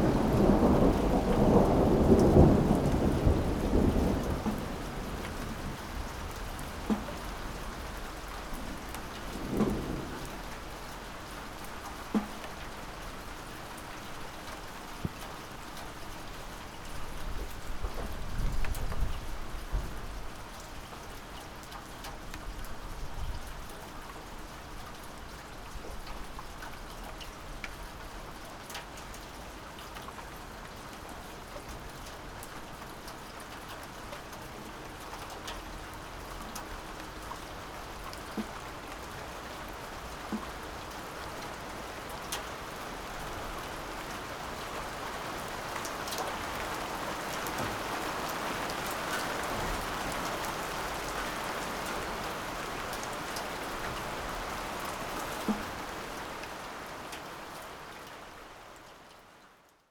spring rain and thunder, early evening in the backyard
(Sony PCM D50)